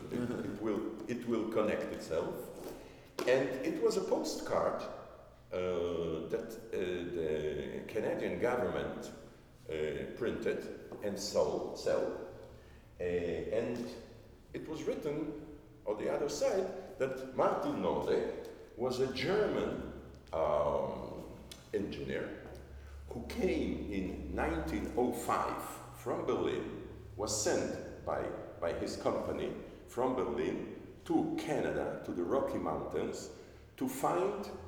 Rafael Blau tells the story of John Koch, an important figure in the revival of the synagogue
(Sony PCM D50)